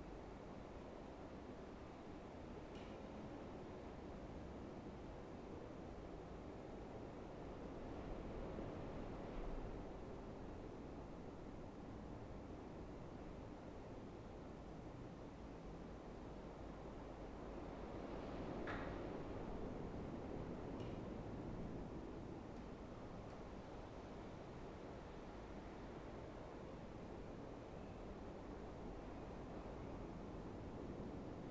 St Leonard's Church, Woodcote - Meditation in St Leonard's Church
A 20 minute mindfulness meditation following the breath. Recorded employing a matched pair of Sennheiser 8020s either side of a Jecklin Disk and a Sound Devices 788T.